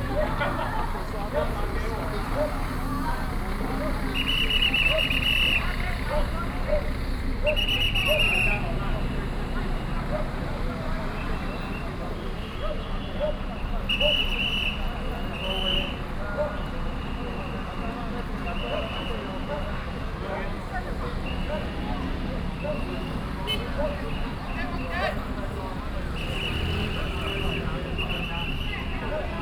Dongxing, Lunbei Township - Whistle sound
Matsu Pilgrimage Procession, Traffic sound, Firecrackers and fireworks, A lot of people, Directing traffic, Whistle sound